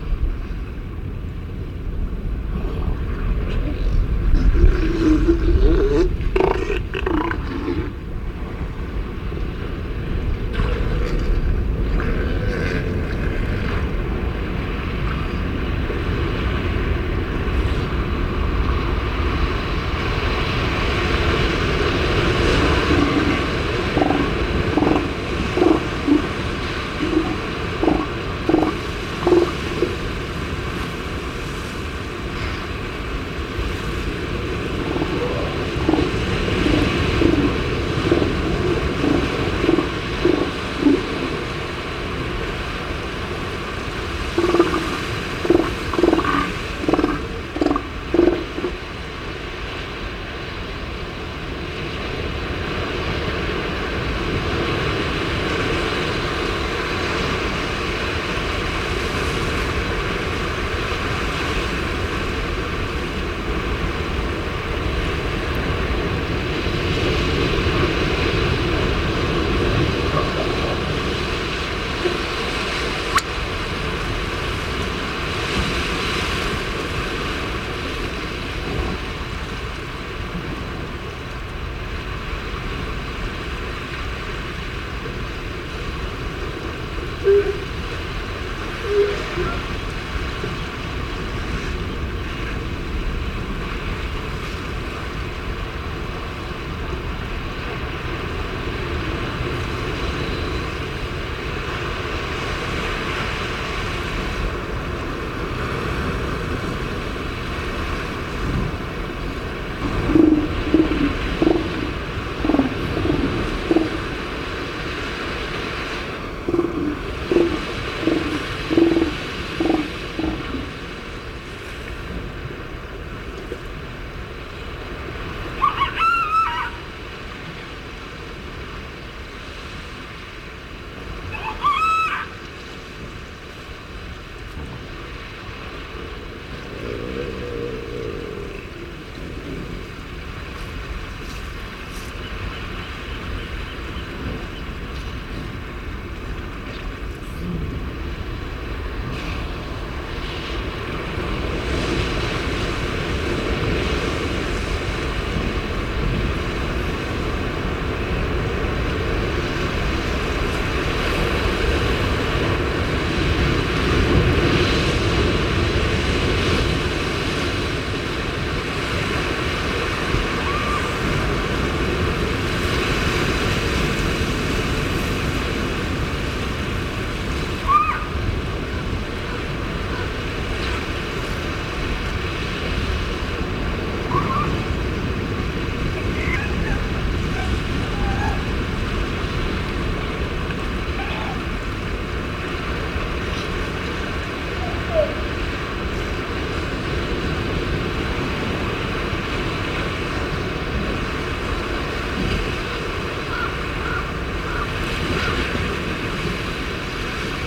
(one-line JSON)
{"title": "Mexico - Elephant seals ...", "date": "2005-03-28 10:00:00", "description": "San Benitos Oeste Island ... Isla San Benito ... elephant seal mothers and pups loafing on rocky inlet ... handling noises and breaks ... Telinga ProDAT 5 to Sony Minidisk ... sunny warm clear morning ...", "latitude": "28.29", "longitude": "-115.54", "altitude": "11", "timezone": "America/Tijuana"}